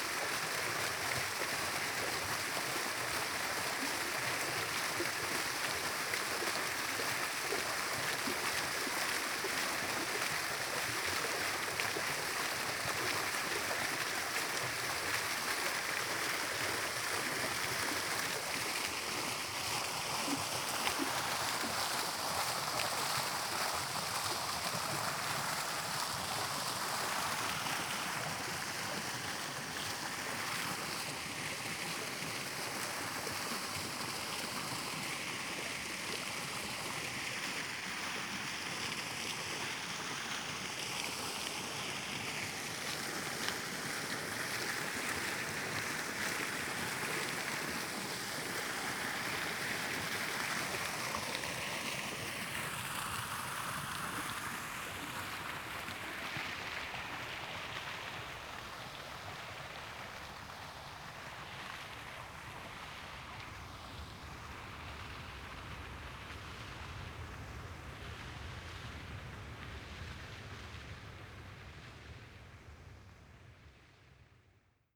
strolling around the fountain at night. It just has stopped its illumination of changing colors, mostly purple...
(Sony PCM D50 int. mics.)
20 September, ~22:00